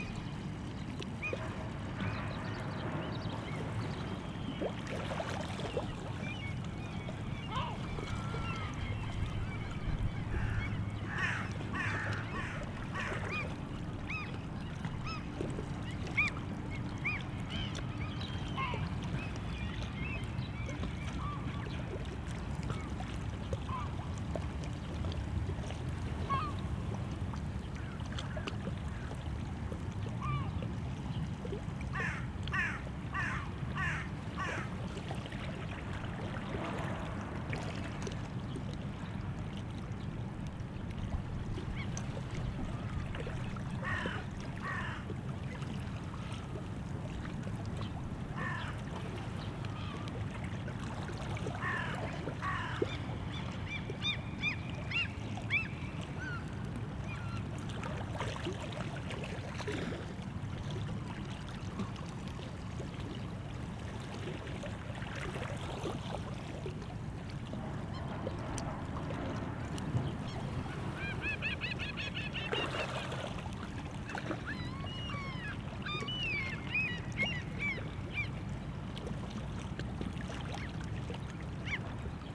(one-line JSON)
{"title": "Tallinn, Kopli, sea", "date": "2011-04-17 12:55:00", "description": "seaside, closed harbour area starts here, sounds of harbou in background", "latitude": "59.46", "longitude": "24.67", "altitude": "9", "timezone": "Europe/Tallinn"}